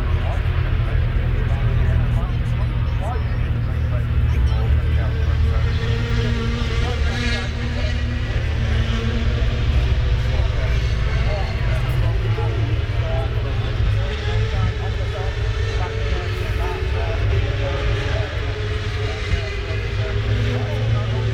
Silverstone Circuit, Towcester, UK - british motorcycle grand prix 2013 ...
motogp fp3 2013 ...
30 August 2013, ~10:00